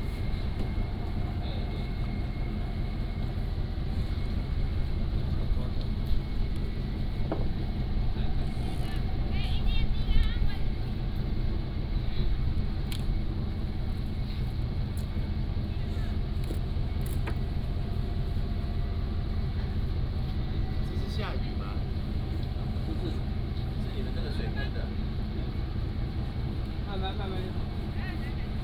白沙碼頭, Beigan Township - On a yacht
On a yacht, sitting at the end of the yacht, Tourists